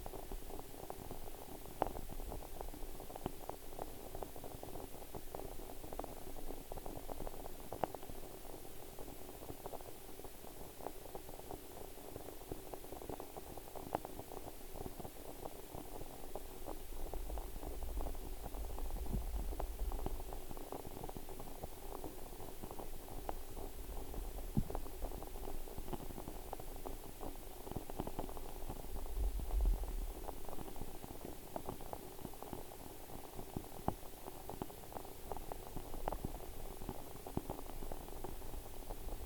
Utena, Lithuania, sound of ice on frozen lake
mics contacted to ice